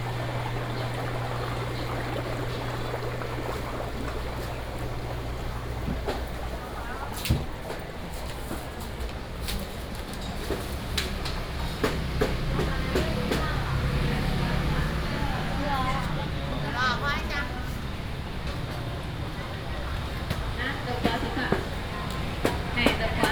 walking in the Public retail market, traffic sound, vendors peddling, Binaural recordings, Sony PCM D100+ Soundman OKM II